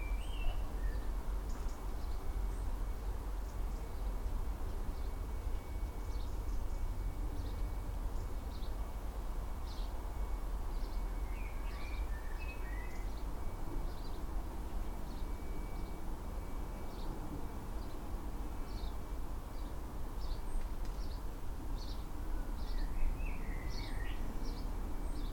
A walnut tree, Katesgrove, Reading, Reading, UK - The angry Robin
This is the alarm call of an angry robin in the area. He is very angry because our cat, Joey, caught his chicks earlier this spring. Joey has very little skill as a hunter but this Robin and its partner built their nest in a highly accessible place in the hedge, very low to the ground. It is not easy to be both a bird-lover and a cat-lover, and we have been reminded of this all summer long, because whenever the cat is in the garden, the Robin produces these urgent alarm-calls. On behalf of our cat we really apologise to the Robins.